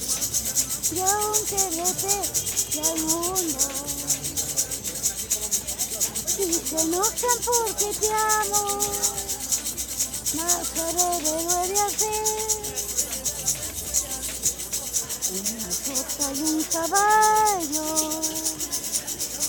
Av 2 Ote, Centro histórico de Puebla, Puebla, Pue., Mexique - Puebla - Mexique
Puebla - Mexique
Ambiance rue 5 de Mayo
Puebla, México, 21 September 2019, 12:00